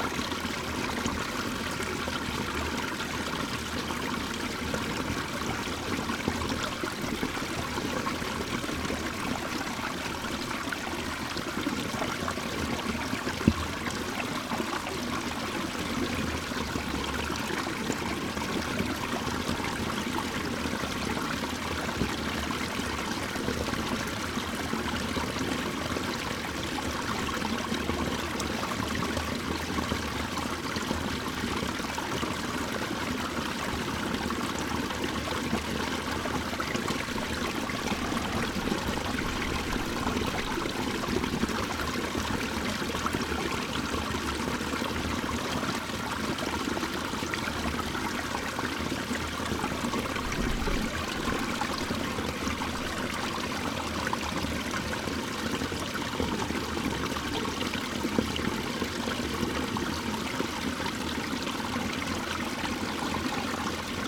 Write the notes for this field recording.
drain of a water basin, the city, the country & me: october 2, 2010